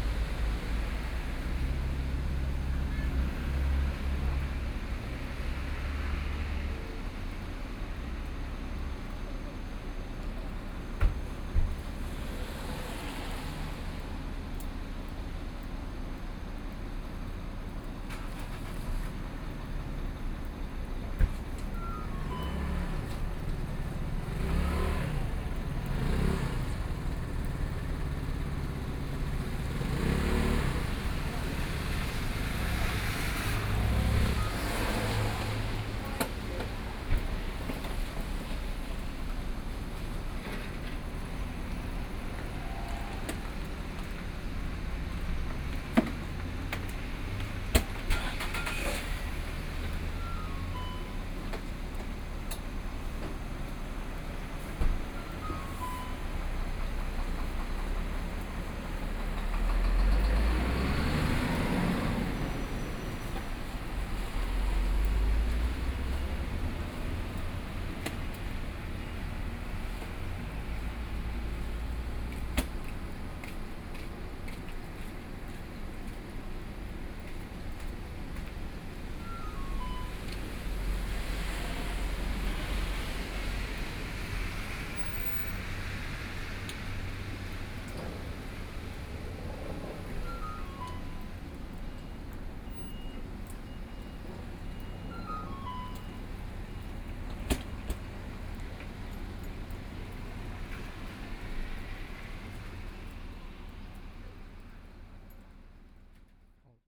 三星鄉義德村, Yilan County - Parking lot
In front of the convenience store, Parking lot, Rainy Day, Small village, Traffic Sound
Sony PCM D50+ Soundman OKM II